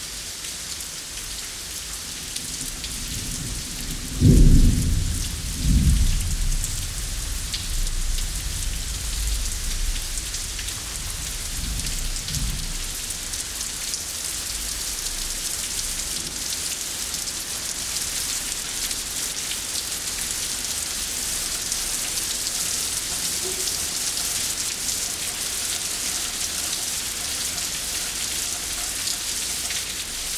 Carrer de les Eres, Masriudoms, Tarragona, Spain - Masriudoms Foothills Thunderstorm
Recorded with a pair of DPA 4060s into a Marantz PMD661